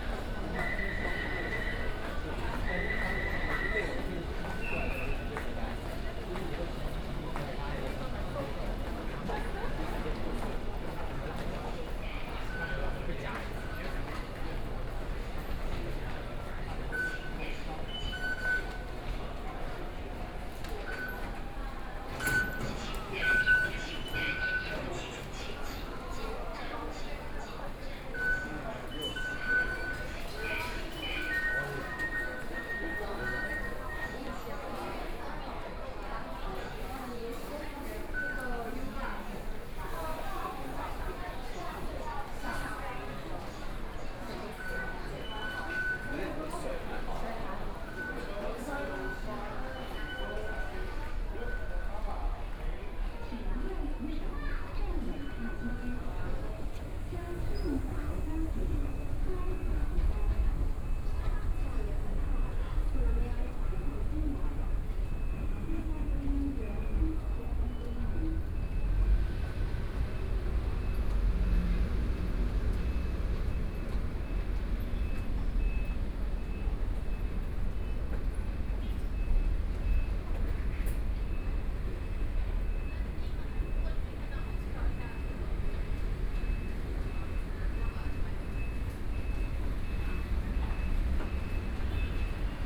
Zhongxiao E. Rd., Taipei City - Blue Line (Taipei Metro)
from Zhongxiao Xinsheng station to Zhongxiao Fuxing station, Arrive at the station and then out of the station, Binaural recordings, Sony PCM D50 + Soundman OKM II